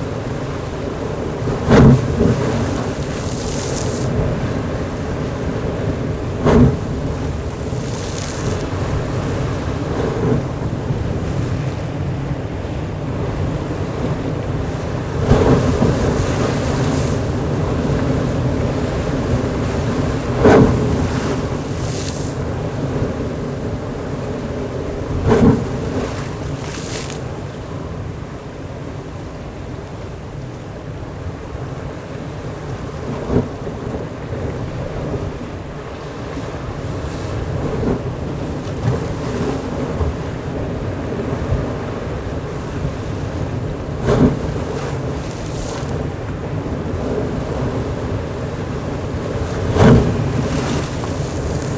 Russia, The White Sea - The White Sea, Cape of Sharapov
The White Sea, Cape of Sharapov. The recording was made during a trip to shore the White Sea.
Запись сделана во время путешествия по берегу Белого моря. Мыс Шарапов.
Recorded on Zoom H4n